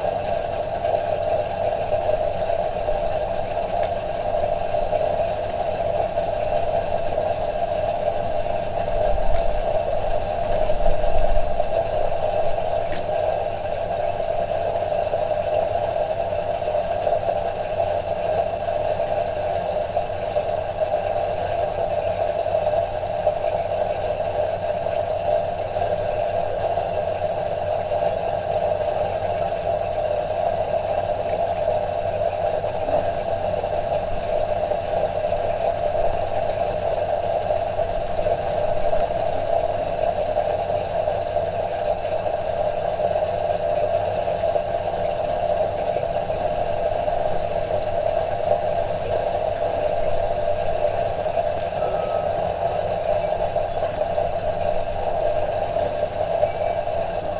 water flowing through a pump in a well. (roland r-07)